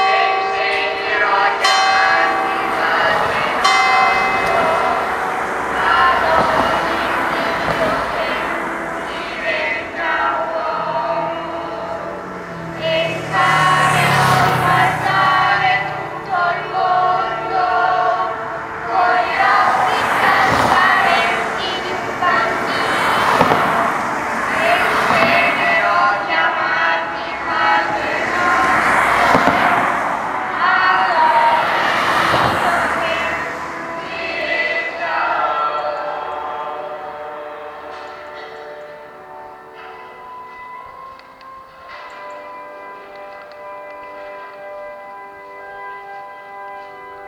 The Church of Roncole stands in front of the main street of the valley. The Sunday Eucharist Celebration was amplified through an old loudspeaker on the bell-tower but none was outside. The words of the celebration and of the choirs mixed with the noise of the fast cars on the street create a surrealistic effect. The tension releaases when, at the end of the celebration, people comes out.